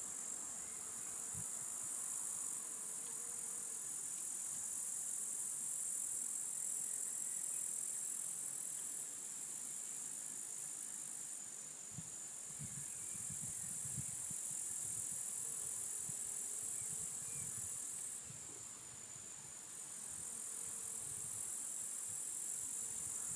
Eisenacher Straße, Ehrenberg/Seiferts (Rhön) - Rhönschafhotel.Schäferwagen
Ehrenberg, Germany, 25 July 2013, ~15:00